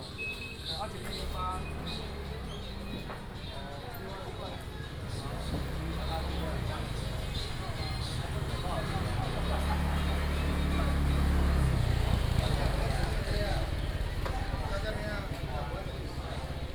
Walking through the market, Traffic Sound